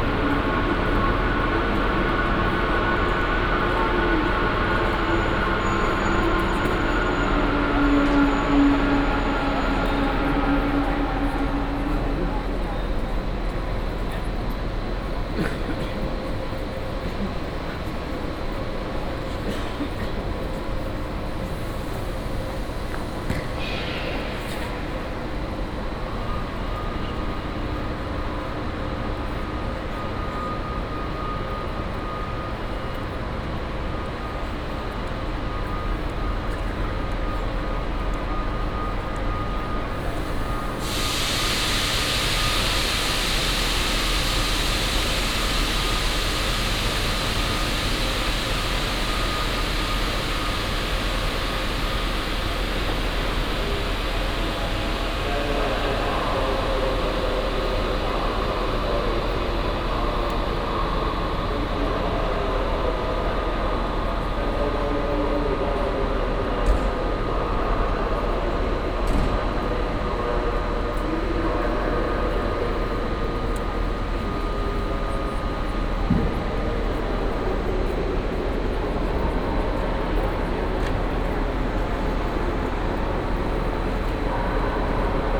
dresden, main station, main hall - dresden main station walk
walk through Dresden main station, crossing various departents: main hall, shop areas, platforms (binaural recording)
7 December 2011